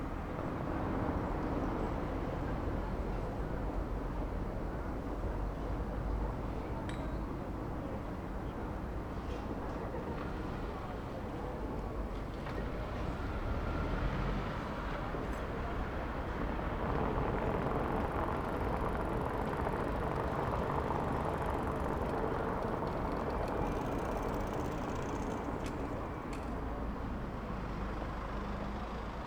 Berlin: Vermessungspunkt Maybachufer / Bürknerstraße - Klangvermessung Kreuzkölln ::: 29.05.2011 ::: 00:07
Berlin, Germany, 29 May 2011